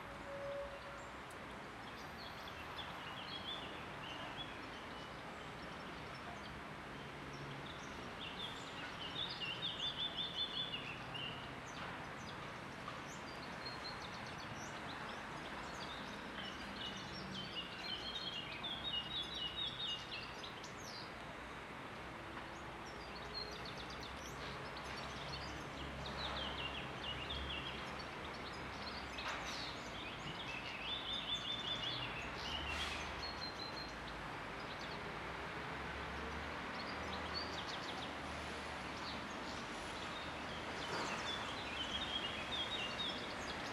L'Aquila, Villa Comunale - 2017-05-22 10-Villa Comunale